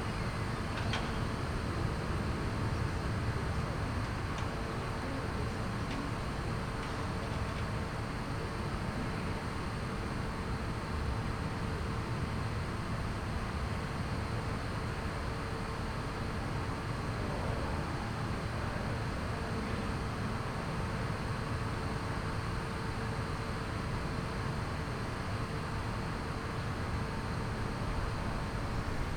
Colombarium ventilation pelouse
Fête des Morts
Cimetière du Père Lachaise - Paris
Ventilation, sous la pelouse (interdite)